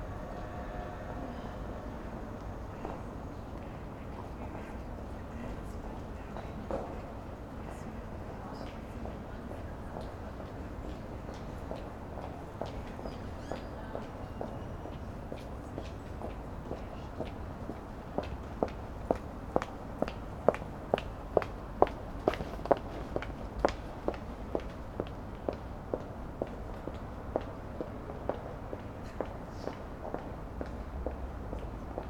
brüsseler platz - autumn
brüsseler platz, autumn, cold, almost freezing, people pass quickly, steps, summer's gone
Köln, Deutschland, 14 October 2009